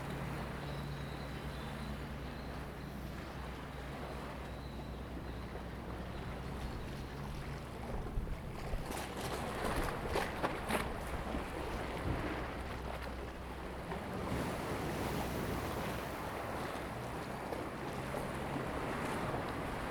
開元港, Koto island - Small port
Small port, Traffic Sound, Sound tide
Zoom H2n MS +XY